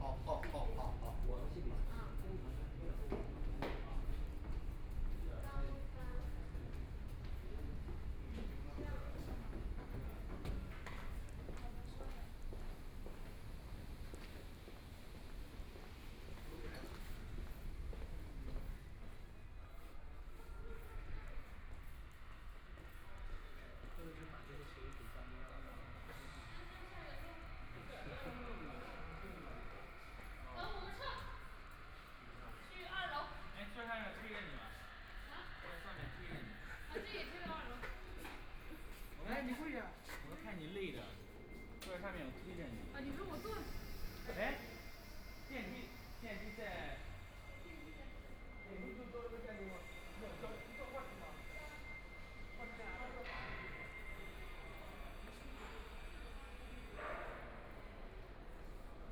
power station of art, Shanghai - soundwalk
Walking in the museum's top floor, Sleeping man snoring, Then go into the coffee shop cracking into the interior, Binaural recording, Zoom H6+ Soundman OKM II (Power Station of Art 20131202-4)